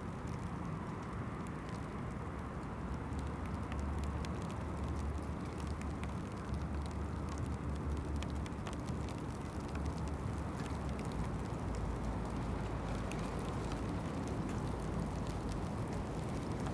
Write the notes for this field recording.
The Drive Westfield Drive Parker Avenue Brackenfield Road Salters Road, Sleet driven on the wind, through the treetops, Father and son, kick a yellow football, back and forth, it skids through puddles, Two mistle thrushes move off